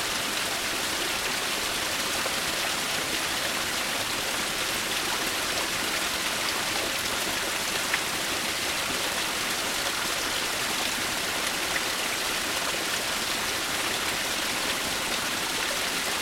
Little waterfall. Seems like it is spring falling metres down and running to the river Nemunas